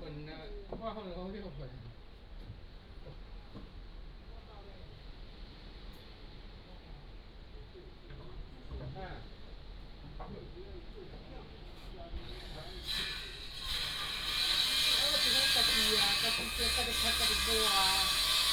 芹壁村, Beigan Township - Renovated house
Renovated house, Old house, Sound of the waves